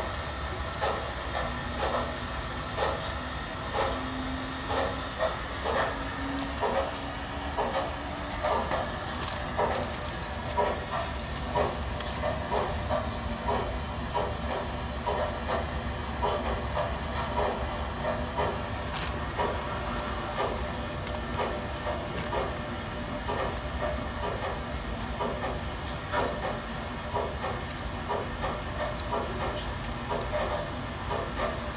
At a temporary house building made of found material by japanese artist Shinro Ohtake. The sound of a mechanic inside the building that also triggers an electric motor that scratches an amplified guitar. Also to be heard: steps on stones by visitors that walk around the building.
soundmap d - social ambiences, art places and topographic field recordings